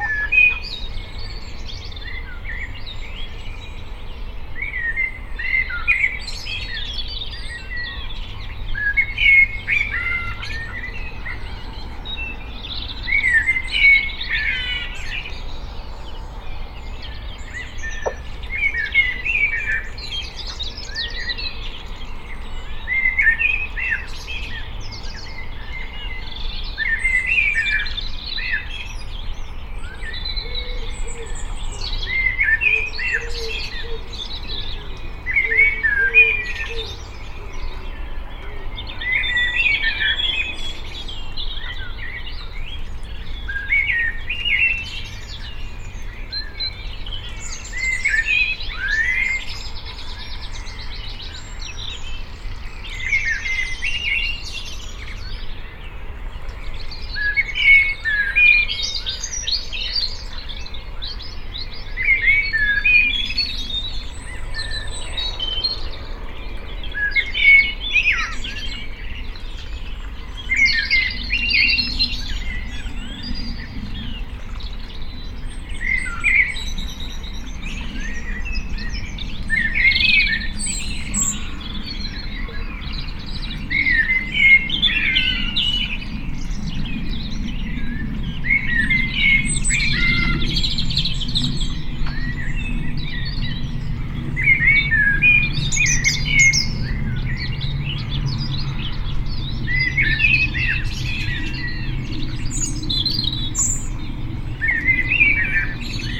Blechhammer, Kędzierzyn-Koźle, Poland - Morning Birdsong in Worcestershire
This is a memorial to the men of BAB21 who lost their lives here in 1944 from bombing by the American Airforce. The men were all prisoners of war in this work camp and must have dreamed of England and sounds like these.
województwo opolskie, Polska, 25 April 2021